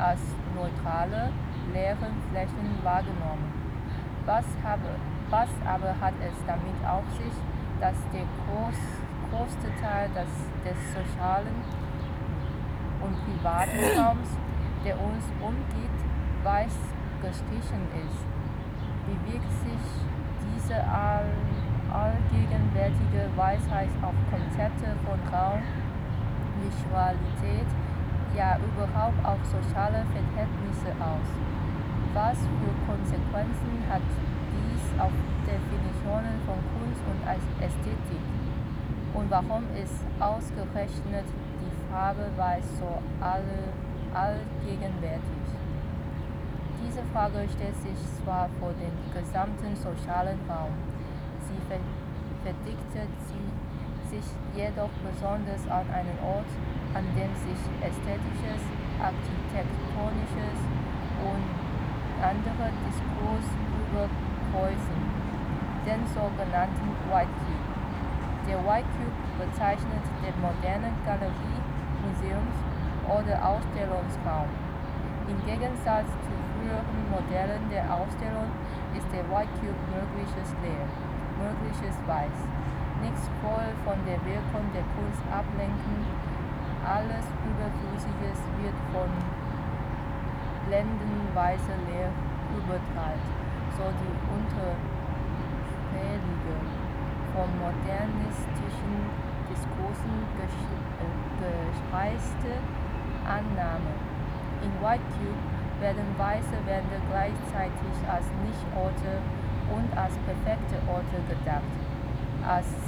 Str. des 17. Juni, Berlin, Deutschland - Lesegruppedololn XI Teil 1
The reading group "Lesegruppedololn" reads texts dealing with colonialism and its consequences in public space. The places where the group reads are places of colonial heritage in Berlin. The text from the book "Myths, Masks and Themes" by Peggy Pieshe was read at the monument of Frederick I and Sophie Charlotte, who stands in colonial politics and the slave trade next to a 3-lane road.